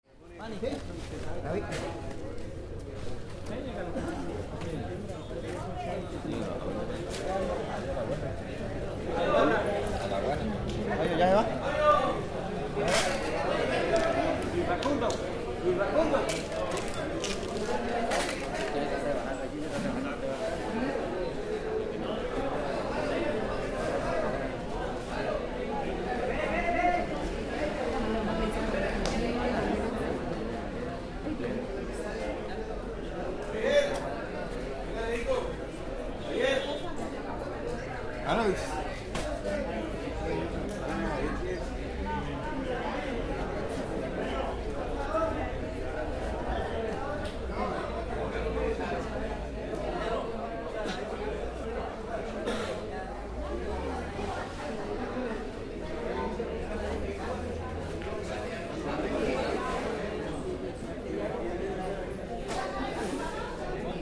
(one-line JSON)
{"title": "Girón, Santander. Tabacalera", "date": "2010-02-07 06:06:00", "description": "Santader es una zona productora de Tabaco, en las tabacaleras se negocian las distintas clases de hojas, si son para el exterior o para el relleno. El audio es el ambiente de negocios boca a boca.", "latitude": "7.07", "longitude": "-73.17", "altitude": "696", "timezone": "America/Bogota"}